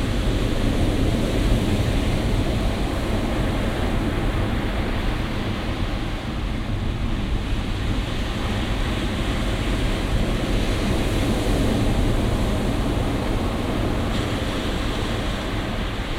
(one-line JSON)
{"title": "atlantic ocean, cabo de finisterre, galicia, spain", "date": "2002-10-20 12:00:00", "description": "sound of the atlantic ocean, solitude bay at cabo de finisterre, which was assumed to be the most western place of the world. wikipedia knows:\nIn the area there are many pre-Christian beliefs and sacred locations. There was an Altar Soli on Cape Finisterre, where the Celts engaged in sun worship and assorted rituals.\nGreco-Roman historians called the local residents of Cape Finisterre the Nerios. Monte Facho was the place were the Celtic Nerios from Duio carried out their offerings and rites in honor of the sun. Monte Facho is the site of current archaeological investigations and there is evidence of habitation on Monte Facho circa 1000 BCE. There is a Roman Road to the top of Monte Facho and the remnants of ancient structures on the mountain.\nrecording made end october 2002, few days before the oil tanker prestige crashed 10 miles offshore from this point, causing a huge ecologic disaster in the whole nothern spain.", "latitude": "42.91", "longitude": "-9.27", "altitude": "1", "timezone": "Europe/Madrid"}